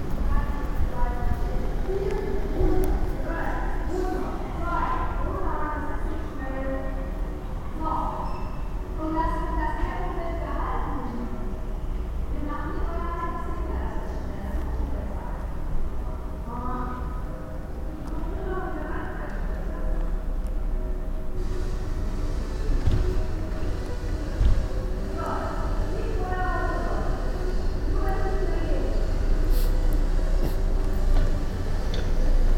unna, flügelstraße, near by a fitness studio
on the street in the early evening, the sound of an amplified fitness trainer with motivation music plus traffic passing by
soundmap nrw - social ambiences and topographic field recordings